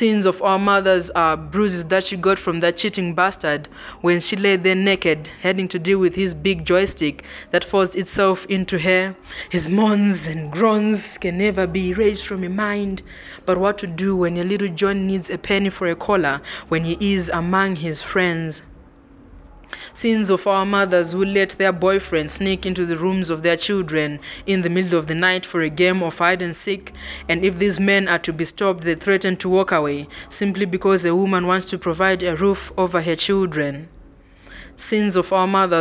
Linda Gabriel, “Sins of our Mothers…”
...for these recordings, we decided to move to the large backyard office at Book Cafe. Evenings performances picked up by then and Isobel's small accountant office a little too rich of ambience ....
some were broadcast in Petronella’s “Soul Tuesday” Joy FM Lusaka on 5 Dec 2012: